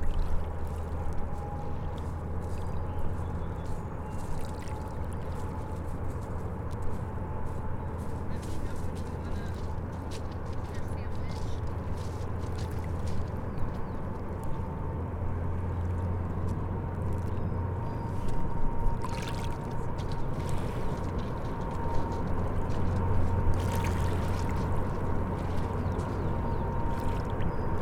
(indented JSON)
{
  "title": "Lincoln Park Beach, Seattle, WA, USA - Ferry departure",
  "date": "2020-05-01 12:30:00",
  "description": "Lincoln Park Beach, ferry departure, helicopter flying overhead, leaving behind sound of waves lapping from ferry COVID-19",
  "latitude": "47.53",
  "longitude": "-122.40",
  "altitude": "10",
  "timezone": "America/Los_Angeles"
}